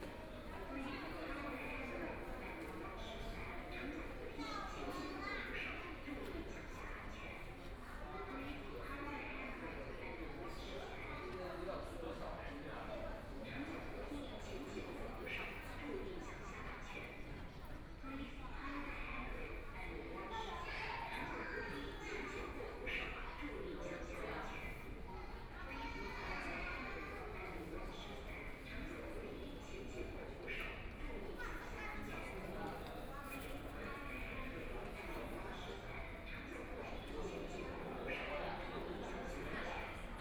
Laoximen Station, Shanghai - in the Station
walking in the Laoximen Station, Binaural recordings, Zoom H6+ Soundman OKM II
2 December 2013, 13:10